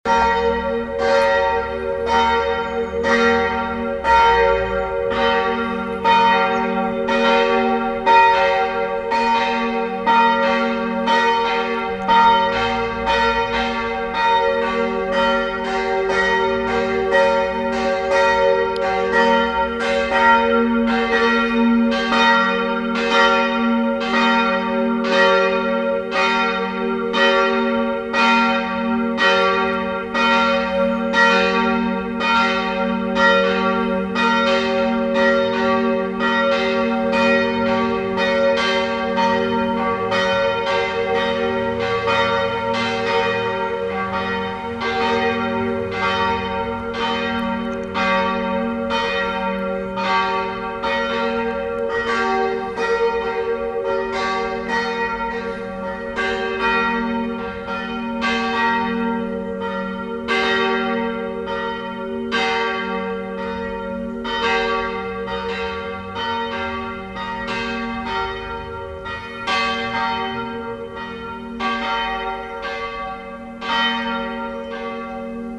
lippstadt, churchbells in the evening, footsteps, ducks
eveningtime, churchbells, park walkers passing by in the end ducks on the river
soundmap nrw: social ambiences/ listen to the people - in & outdoor nearfield recordings
Ostendorfallee, 7 May, ~11:00